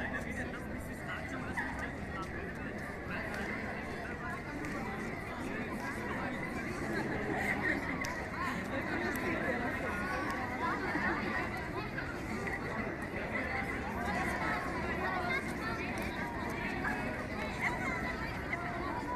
studentski centar
students center, outside of the entrance, in the framework of EBU workshop.